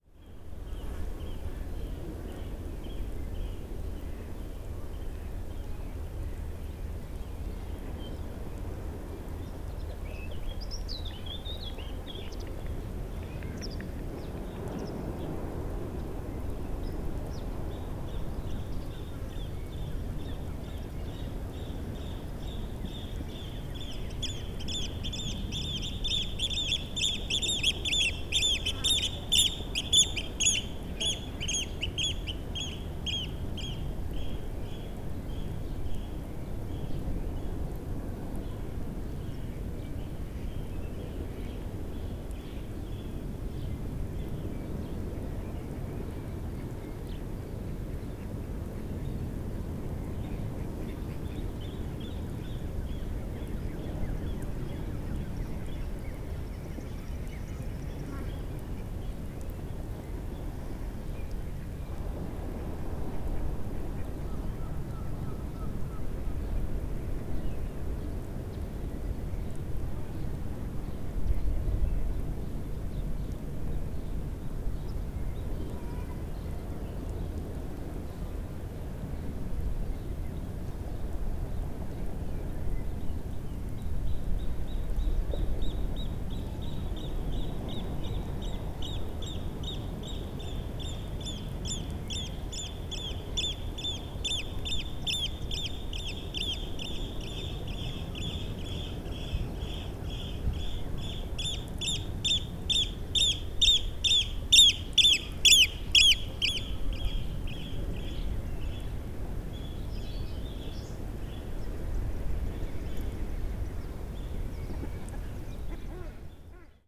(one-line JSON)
{"title": "Hovedgade, Nexø, Denmark - Flying oystercatcher", "date": "2017-05-14 11:46:00", "description": "Flying oystercatcher, passing in front of recorders. Low mud sound. Some wind noise on the mics.\nPassage d’huîtrier pie. Faible bruit de vase. On peut entendre le vent sur les microphones.", "latitude": "55.03", "longitude": "15.12", "altitude": "1", "timezone": "Europe/Copenhagen"}